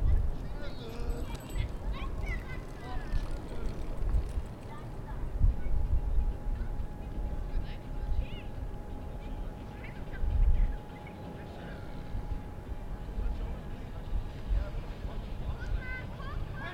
Langel Rheinufer, Köln, Deutschland - Zum ersten mal im Jahr im Sand am Rhein / First Time this year in the sand of the River Rhine
Einige Jugendliche versammeln sich das erste Mal im Jahr im Sand am Ufer des Rheins. Ein Hund bellt im Hintergrund. Motorengeräusche in der Ferne. Fahrräder und Stimmen hinter mir.
Some teens gather for the first time in the sand on the banks of the Rhine. A dog barks in the background. Engine noise in the distance. Bicycles and voices behind me.